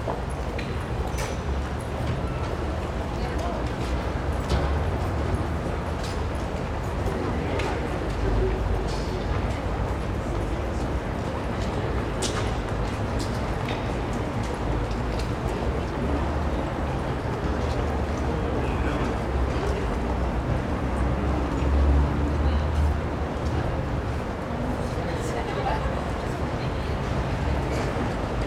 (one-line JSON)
{"title": "Tartu, Raekoja Platz Nov.2009", "date": "2009-11-16 16:30:00", "description": "Town hall square of Tartu Estonia", "latitude": "58.38", "longitude": "26.72", "altitude": "47", "timezone": "Europe/Tallinn"}